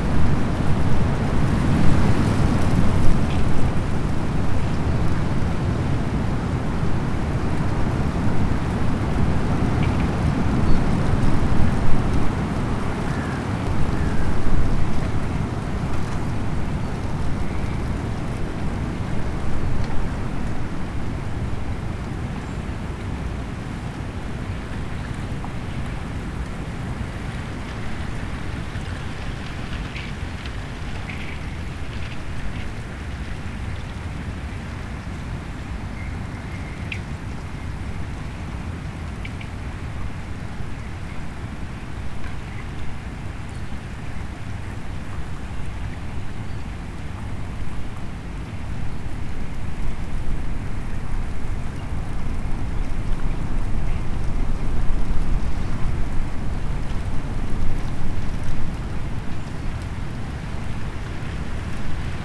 strong wind blows small pieces of ice over the ice of a frozen cove of the havel river
the city, the country & me: march 24, 2013